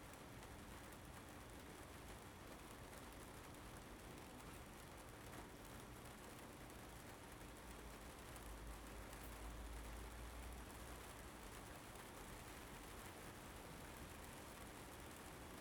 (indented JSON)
{
  "title": "Shaw St, Toronto, ON, Canada - Summer rainstorm from back porch",
  "date": "2015-08-20 22:00:00",
  "description": "Rainstorm (and cat) from covered back porch.",
  "latitude": "43.65",
  "longitude": "-79.42",
  "altitude": "103",
  "timezone": "America/Toronto"
}